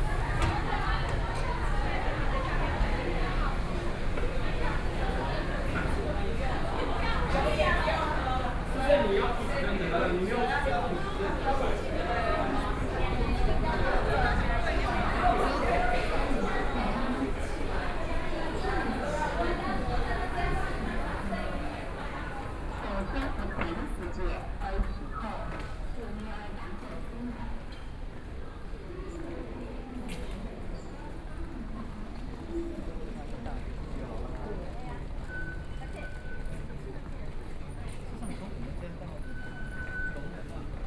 台北市中山區集英里 - Walking through the traditional market
Walking through the traditional market, Traffic Sound
Sony PCM D50+ Soundman OKM II
Taipei City, Taiwan